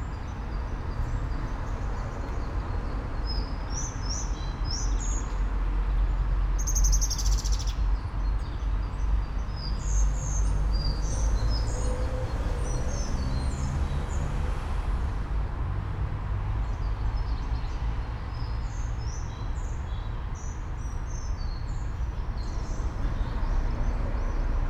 all the mornings of the ... - may 10 2013 fri
Maribor, Slovenia, 10 May, ~07:00